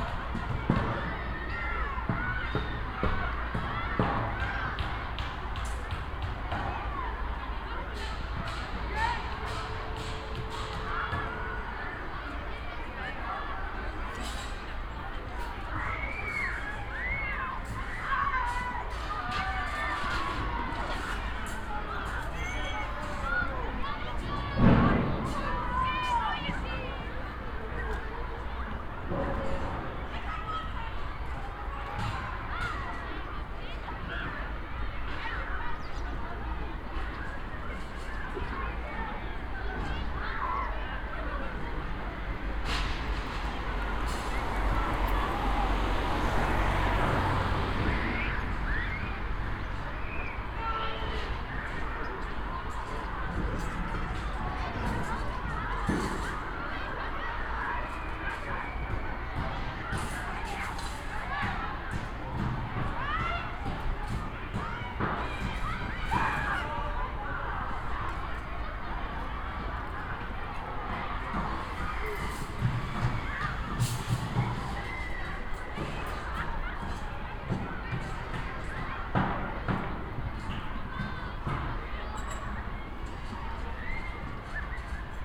Helmut-Schmidt-Allee, München, Deutschland - Freiham at Noon
A construction site in the immediate vicinity of the education campus at lunchtime in Freiham